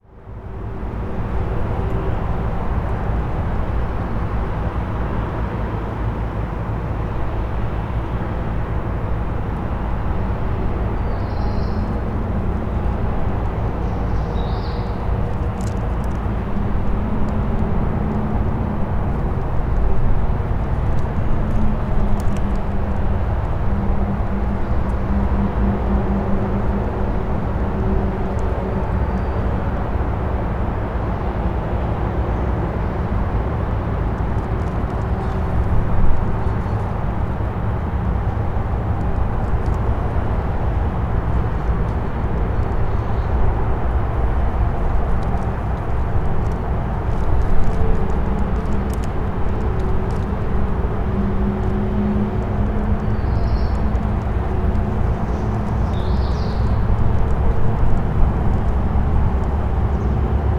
marienborn: gedenkstätte deutsche teilung - borderline: memorial of the german division
formerly border checkpoint helmstedt marienborn named "grenzübergangsstelle marienborn" (border crossing Marienborn) by the german democratic republic
borderline: may 9, 2011